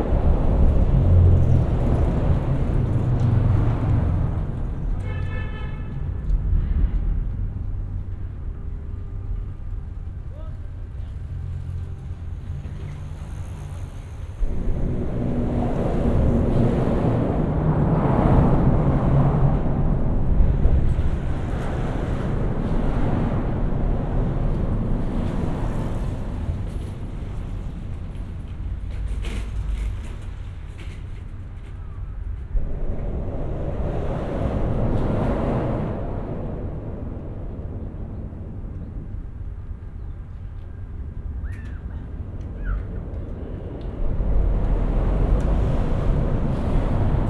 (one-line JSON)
{"title": "Montreal: Charlevoix Bridge (under) - Charlevoix Bridge (under)", "date": "2009-05-04 18:05:00", "description": "equipment used: Olympus LS-10 & OKM Binaurals\nStanding underneath the Charlevoix Bridge, there is an interesting mixture of traffic above, passing bikes/inline skates/runners, and birds.", "latitude": "45.48", "longitude": "-73.57", "altitude": "12", "timezone": "America/Montreal"}